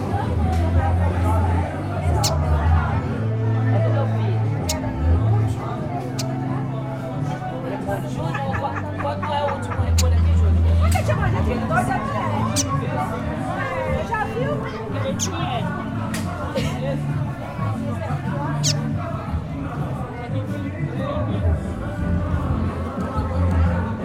Na feira, um homem pede para seu pássaro cantar.
In the free market, a man asks for your bird to sing.